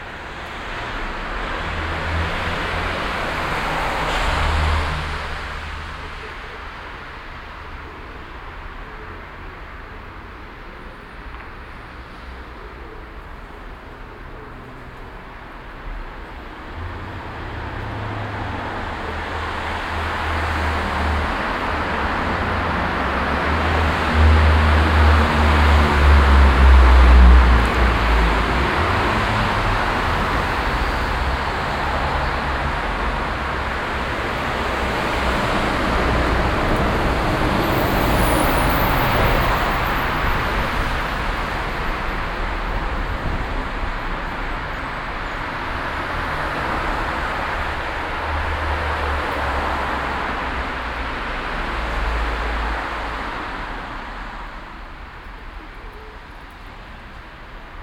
cologne, gladbacherstrasse, unterführung
mittags in unterführung, taubengurren aus zahlreichen nestern dazu heftiger strassenverkehr
soundmap nrw - social ambiences - sound in public spaces - in & outdoor nearfield recordings